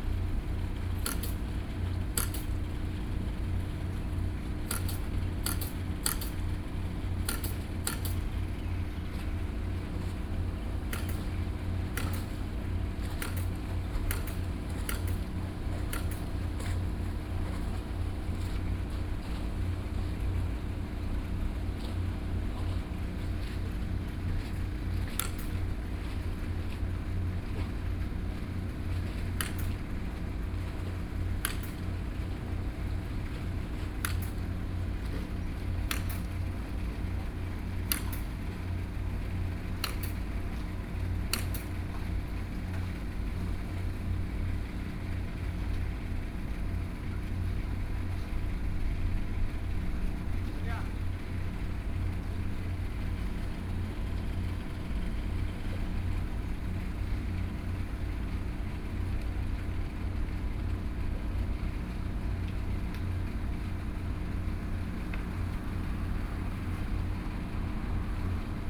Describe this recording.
Next to the pier, Hot weather, Traffic Sound